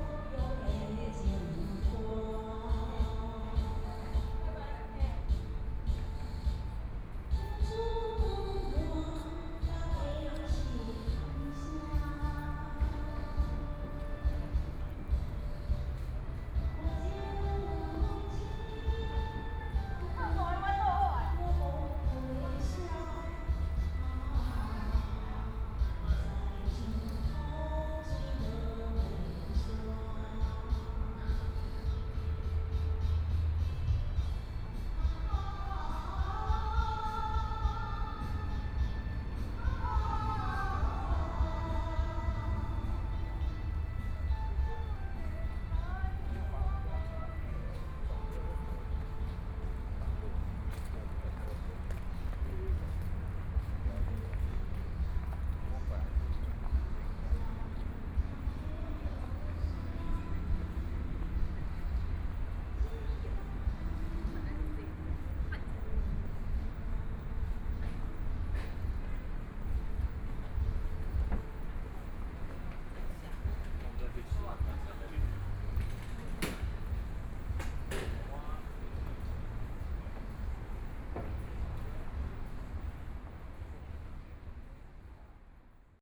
Community recreation center, Several women chatting and singing, Traffic Sound
Please turn up the volume a little
Binaural recordings, Sony PCM D100 + Soundman OKM II
Zhongshan District, Taipei City, Taiwan